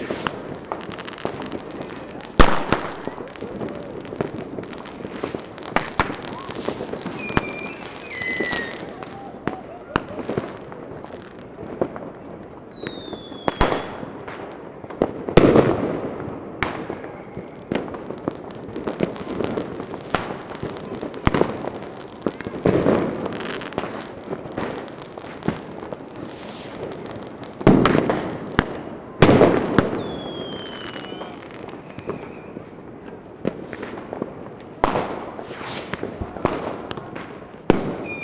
Berlin: Vermessungspunkt Maybachufer / Bürknerstraße - Klangvermessung Kreuzkölln ::: 01.01.2011 ::: 00:19